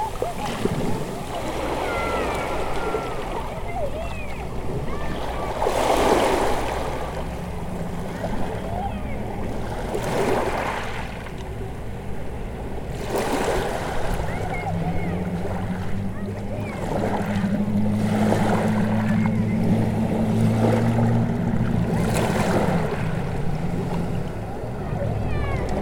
Greystones Harbour, Rathdown Lower, Co. Wicklow, Ireland - The Sunken Hum Broadcast 102 - The Harbour in Greystones - 12 April 2013
A nice time watching the water coming in at the harbour greystones.
This is the 102nd broadcast of The Sunken Hum - my daily sound diary for 2013.